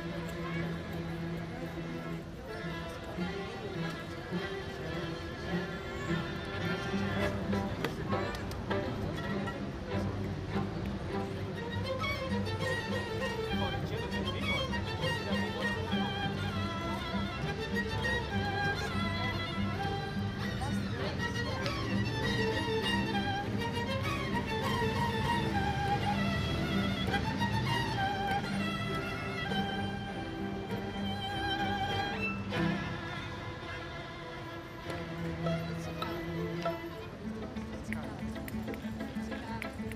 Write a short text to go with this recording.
Street orchestra plays Danza ritual del fuego by Manuel de Falla, Place Colette, Paris. Binaural recording.